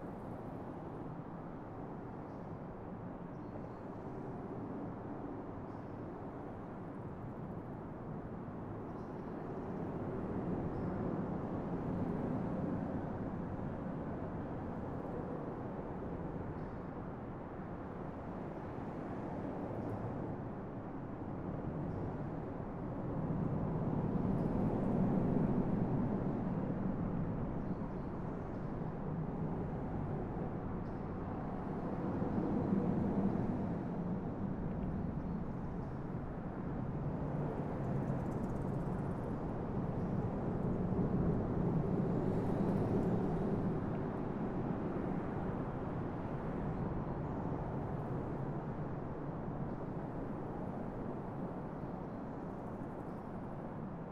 Rio Grande Interstate 40 Underpass accessed via Gabaldon Place. Recorded on Tascam DR-100MKII; Fade in/out 30 seconds Audacity, all other sound unedited.
NM, USA